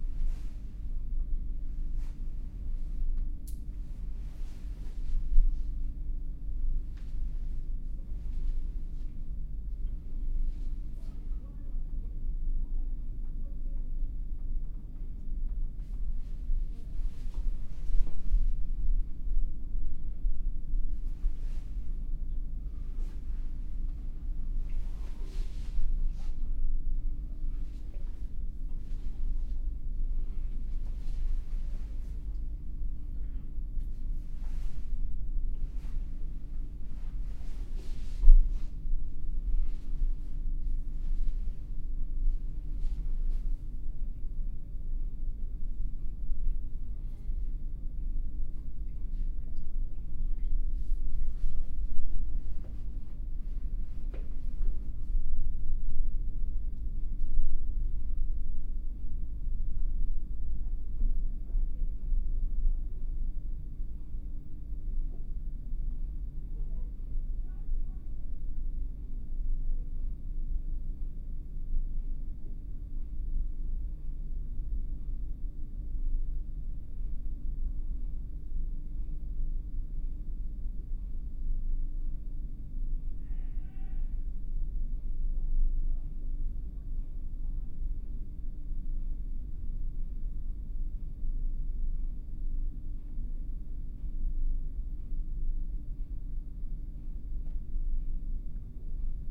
A 20 minute meditation in the quiet/prayer room of the Wellbeing Centre at Oxford Brookes University (Pair of Sennheiser 8020s either side of a Jecklin Disk recorded on a SD MixPre6).
Headington Rd, Oxford, UK